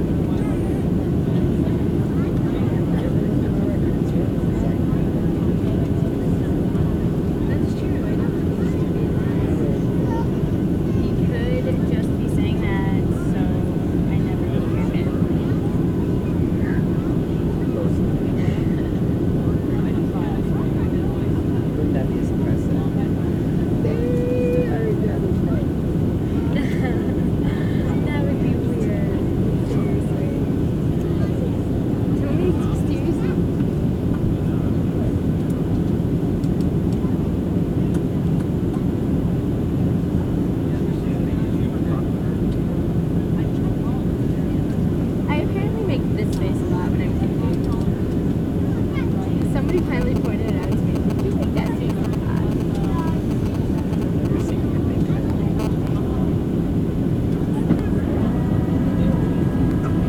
Airplane, Orlando Airport
Airplane...about to lift off from Orlando.
10 June 2010, ~01:00